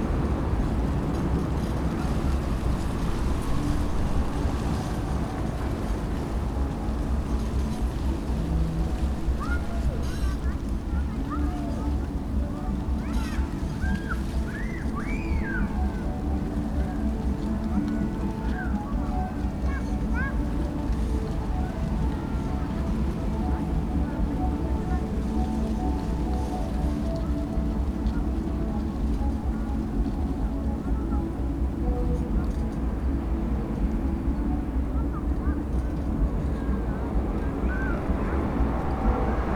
berlin: rütlistraße - the city, the country & me: bush and fence
dry leaves of a bush in the wind, creaking fence, music of a fair (in the distance)
the city, the country & me: march 17, 2013